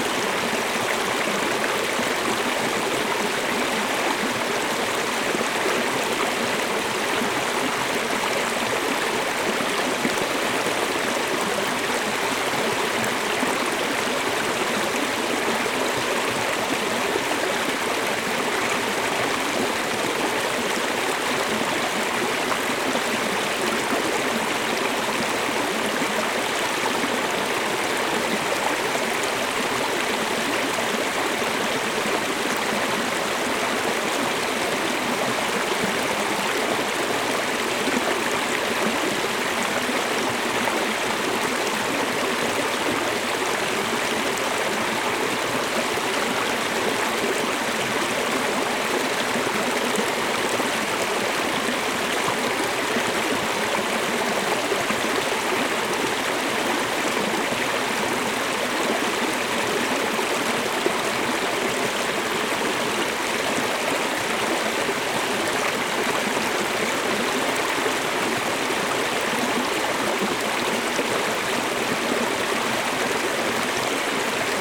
Lost Maples State Park, TX, USA - Lost Maples Rocky Rivulet
Recorded with a pair of DPA 4060s into a Marantz PMD661